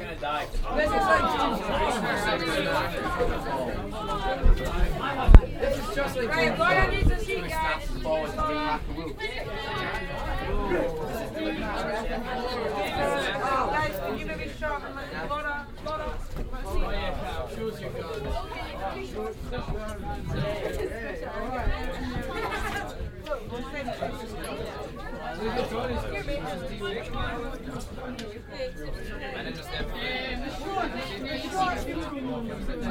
vancouver, grouse mountain, skyride station
at grouse mountain ground station - people entering the cabin, talking youth group
soundmap international
social ambiences/ listen to the people - in & outdoor nearfield recordings